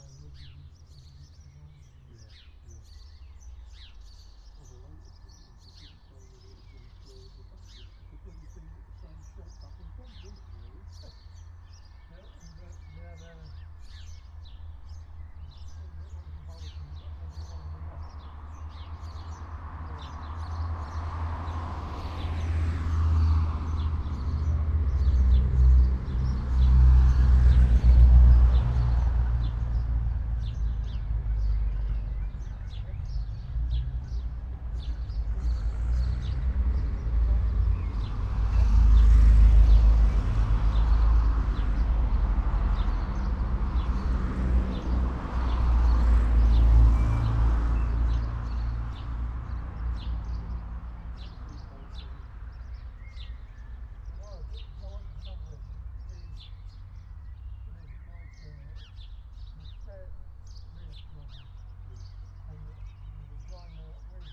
Malton, UK - white rose classic rally ...
white rose classic rally run by malton car club ... xlr sass on tripod to zoom h5 ... extended edited recording ... lots of traffic ... m'bikes ... lorry ... farm traffic ... cyclists ... and some of the seventy entrants from the car rally ... lots of waving ... bird song ... calls ... house sparrow ... blackbird ... swallow ...
England, United Kingdom